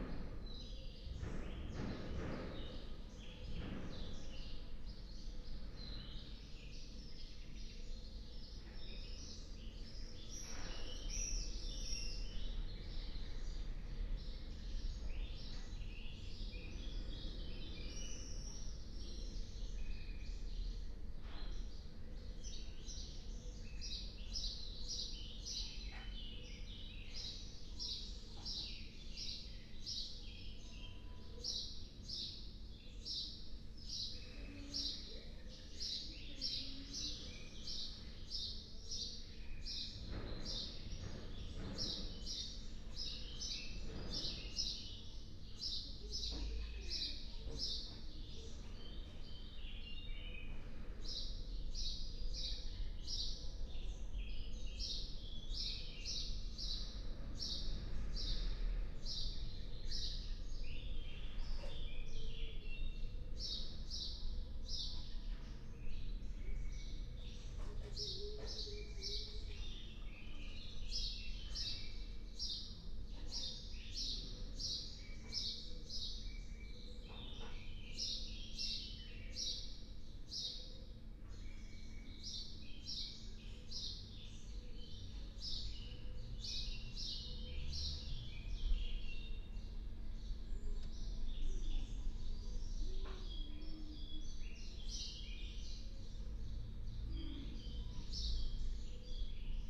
Berlin Bürknerstr., backyard window - Hinterhof / backyard ambience
10:03 Berlin Bürknerstr., backyard window
(remote microphone: AOM5024HDR | RasPi Zero /w IQAudio Zero | 4G modem